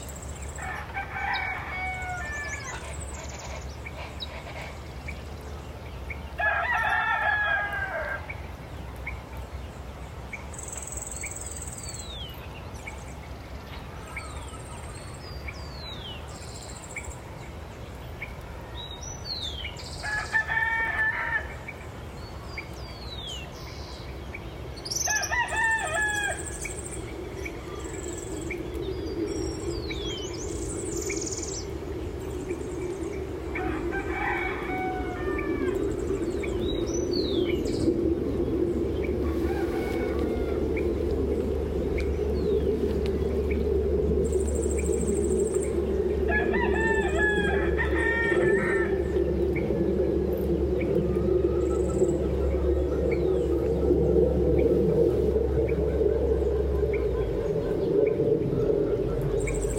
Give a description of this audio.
Suburban farm with a warm climate of around 20 degrees Celsius, Peñitas village in the municipality of Puente Nacional, Santander, Colombia. With abundant flora and fauna, national road Bogota- Bucaramanga, with transit to the Atlantic coast being a life of heavy traffic and airway. There are domestic animals because it is a populated environment.